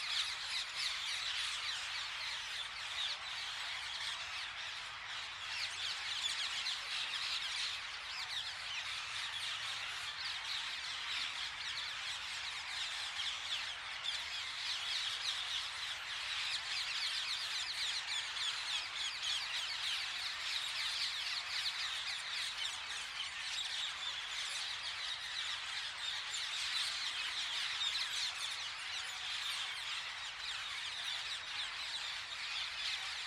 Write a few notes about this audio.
At dawn and dusk everyday without fail a large number of Ring-Necked Parakeets roost in this tree - they spend the night and then do what they do during the day and return again at dusk. Its a very noisy experience, and can be heard at quite a distance from their roost. recorded using Mixpre6 and ORTF Rode NTG5 stereo pair.